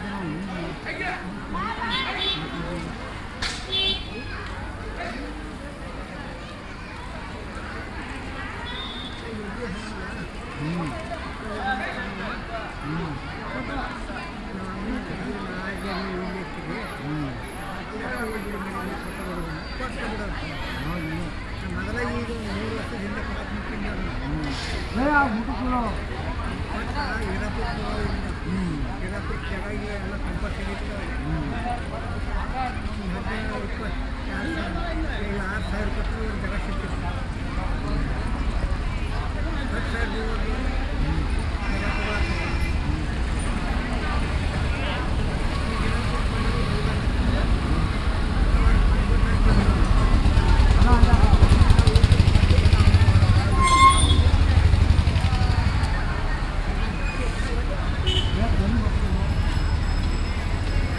Saundatti, Near Khadi Kendra, Between temple and market
India, Karnataka, Temple, Market, cow, Bell, Binaural
Saundatti, Karnataka, India